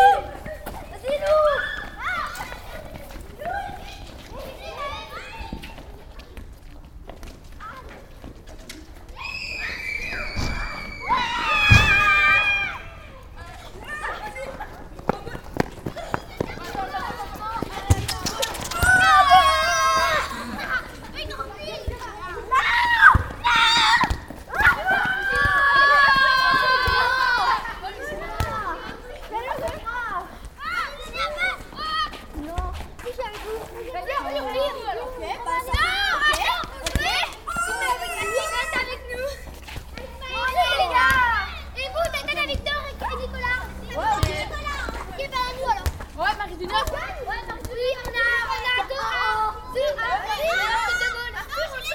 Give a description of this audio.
Children playing football in their school : l'école du Neufbois.